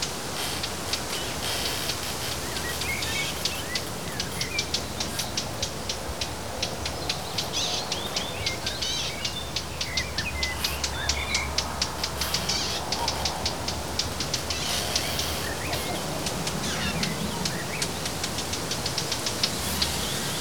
Morasko, grove at Deszczowa road - cutting bird
microphones pointed into a small groove. bird cutting air with its sharp chirp. swoosh of bushes. creaking branches. cars and dogs in activity in the distance.